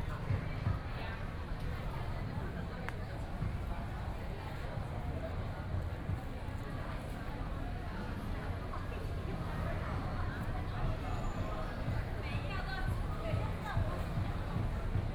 MinXiang Park, Taipei City - Parks and temples
in the Parks and the temples
Sony PCM D50+ Soundman OKM II